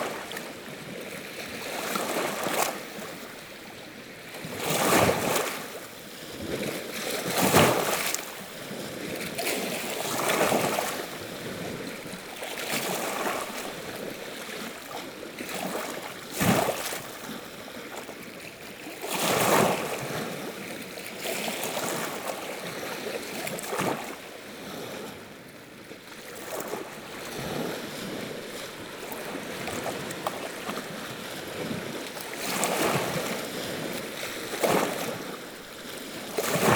Noratus, Arménie - Sevan lake
Quiet sound of the Sevan lake, which is so big that the local call it the sea.